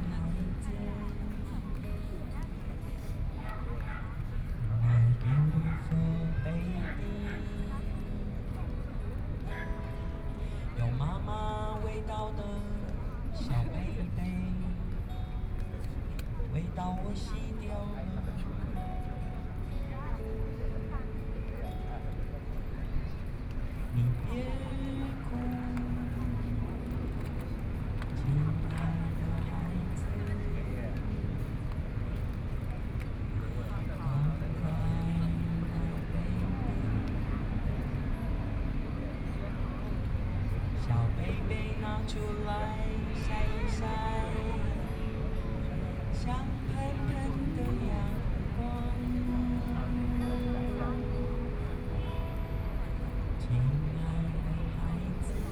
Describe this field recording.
Taiwanese Aboriginal singers in music to oppose nuclear power plant, Sing along with the scene of the public, Sony PCM D50 + Soundman OKM II